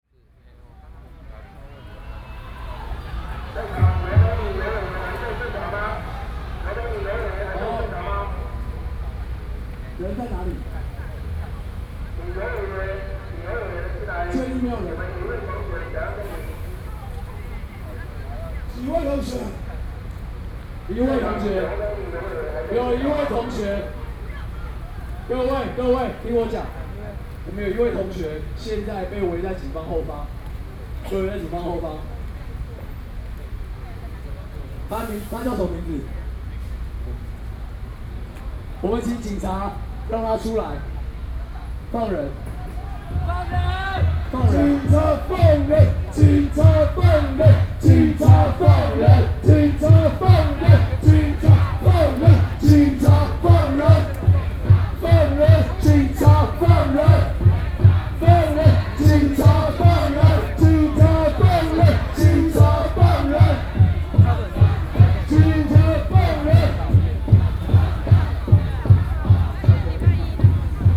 Students' protests in the Executive Yuan, Binaural recordings